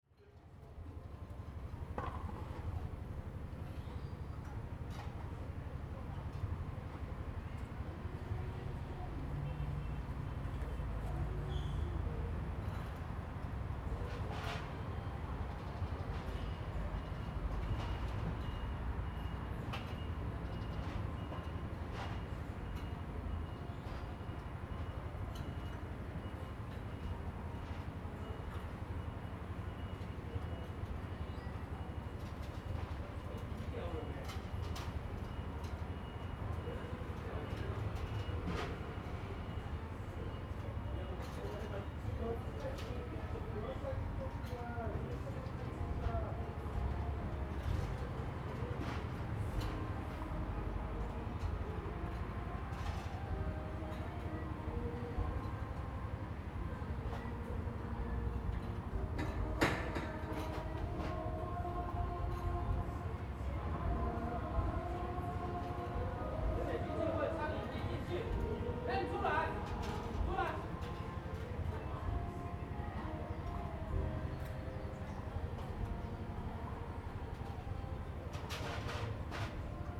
Zhongzhi Rd., Yuli Township - small Town
small Town, Sitting under a tree
Zoom H2n MS +XY
Yuli Township, Hualien County, Taiwan, 7 September